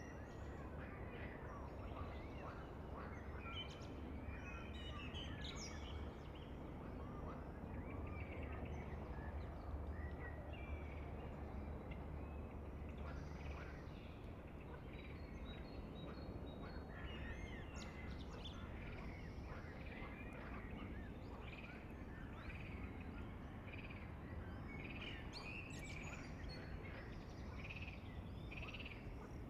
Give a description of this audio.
Vögel, Frösche, Passage Helikopter, 1. Glockenschlag Kirche Erlöser, 2. Glockenschlag Kirche Neumünster.